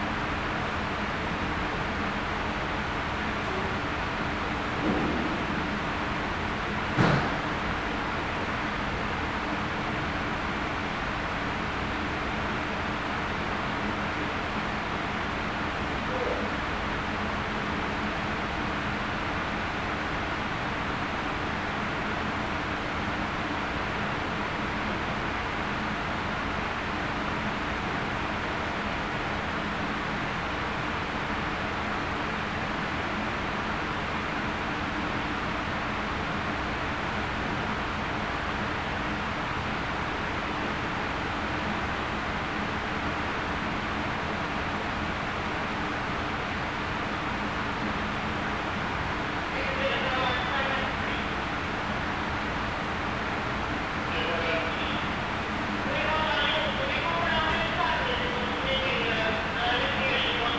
Dover Port, Dover, United Kingdom - Driving off the ferry
Recorded on the interna mics of a Zoom H4n which was being held out of the window, this clip has the sounds of a walkie-talkie, car engines starting, and finally driving off the ferry and being buffeted by wind.
Kent, UK, July 2015